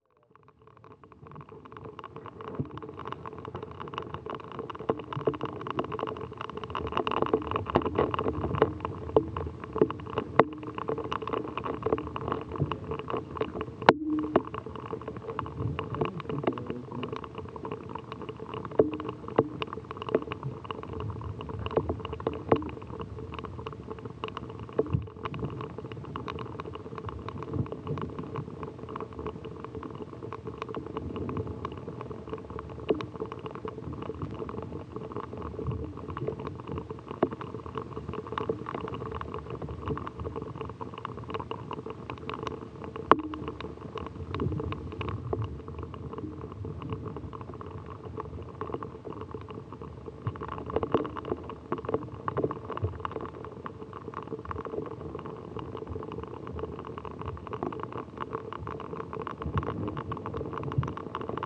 Bogart Hall, Ithaca, NY, USA - Icicle Drip (hydrophone)
Ice formation recorded a contact mic with a drill bit attachment.
Tompkins County, New York, United States, 17 February 2021